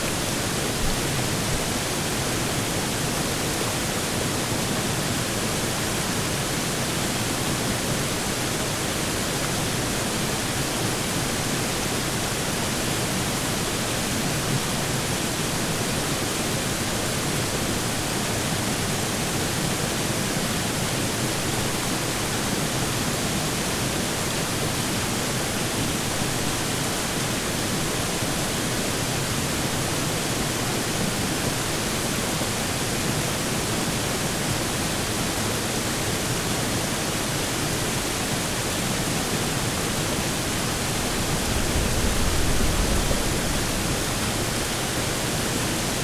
Seven Lakes Dr, Tuxedo, NY, USA - Water Over The Rocks
Water running under an overpass into Lake Skannatati, Harriman State Park. The water runs from right to left over a series of rocks, the source of the water being Lake Askoti.
[Tascam DR-100mkiii & Primo EM-272 omni mics]
Orange County, New York, United States, 25 August 2021